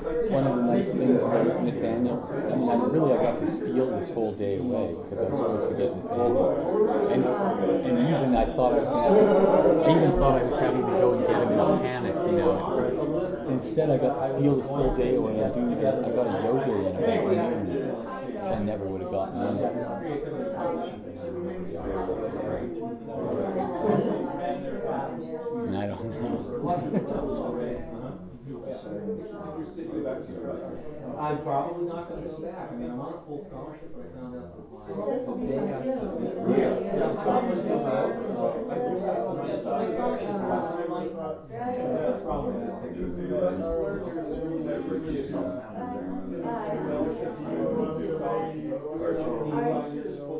neoscenes: open-house at Jerrys